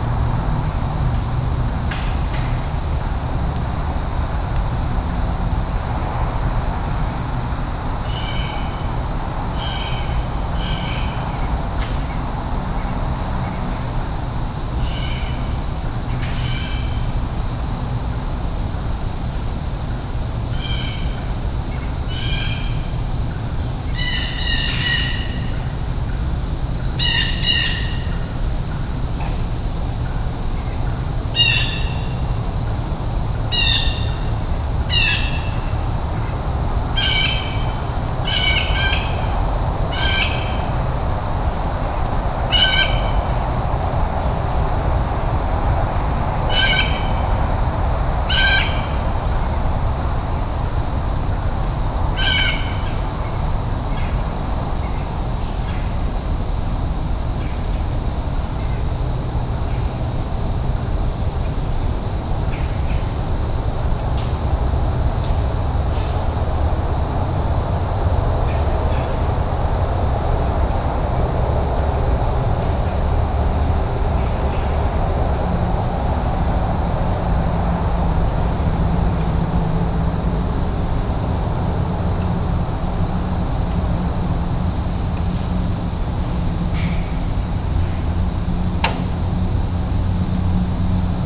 Maverick Concert Hall, Woodstock, NY, USA - Four minutes and thirty three seconds
A fall morning at the venue where John Cage's composition 4'33" was premiered on August 29 1952, performed by David Tudor. The concert hall was not open but I was interested to see what a minimal structure it is - bare boards with wide gaps between, no insulation for cold. Listening inside the Hall would be almost like being outside. It is also very beautiful in its simplicity.